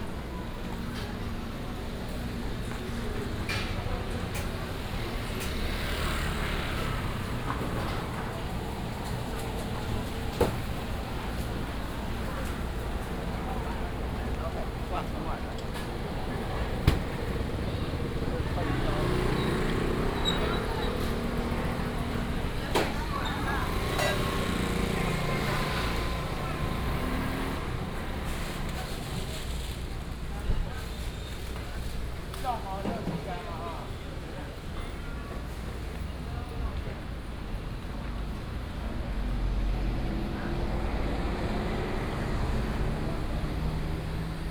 光復街, Qingshui Dist., Taichung City - Night Market
In the Night Market, Traffic sound, local dishes, Binaural recordings, Sony PCM D100+ Soundman OKM II
Qingshui District, Taichung City, Taiwan, 9 October 2017